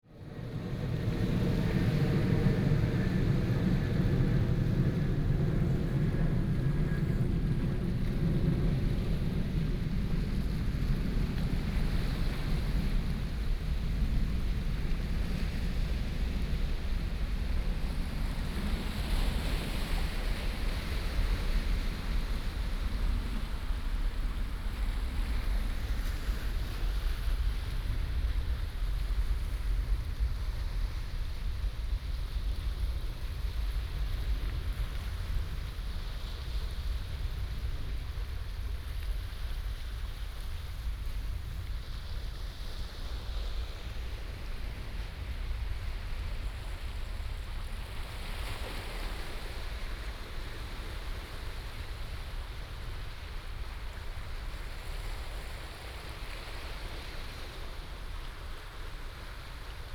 溪口, 淡水區, New Taipei City - On the coast
On the coast, The sound of the waves, Aircraft sound
21 November 2016, 16:07